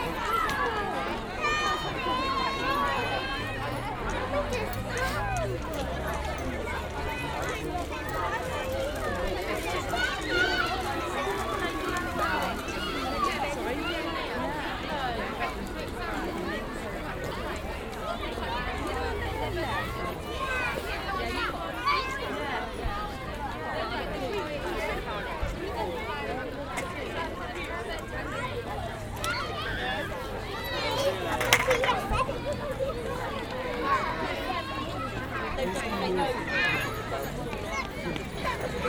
Reading, Primary school.
End of school day. Parents and children in playground.
Reading, UK, 4 March 2011, 15:13